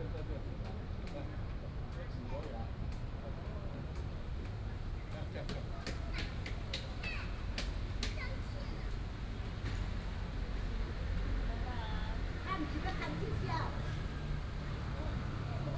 In the park, The elderly and children, Bird calls, Very hot weather, Rope skipping